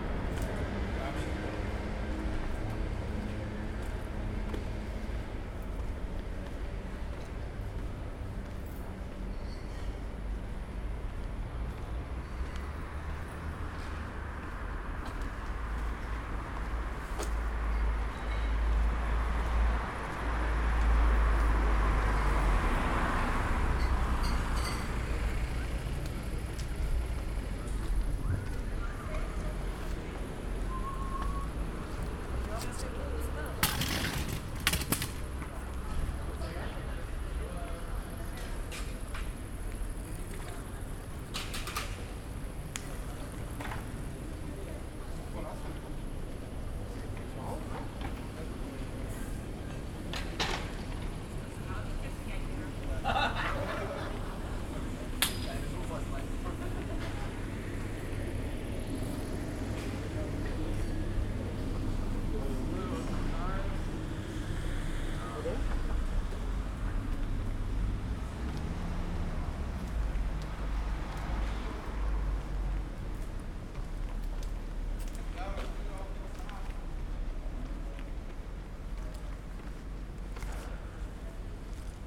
night walk around the block, Belgian Quarter / Belgisches Viertel: restaurants closing, people in the street and gathering at Brüsseler Platz, a strange hum, sound of the freight trains passing nearby can be heard everywhere in this part of the city.
(Sony PCM D50, DPA4060)